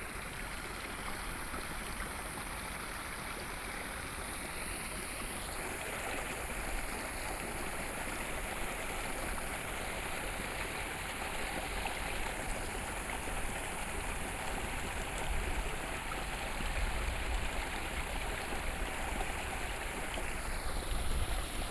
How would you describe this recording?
In the farmland, Traffic Sound, Farmland irrigation waterways, The sound of water, Train traveling through